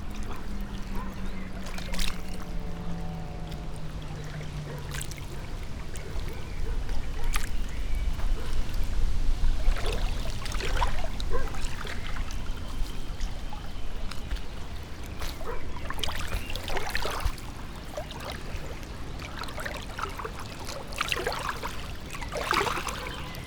Mariborski otok, river Drava, tiny sand bay under old trees - waves
bright green lights, wave writings change rapidly as winds stirs water flow, it is gone with another before you notice
Kamnica, Slovenia, 26 April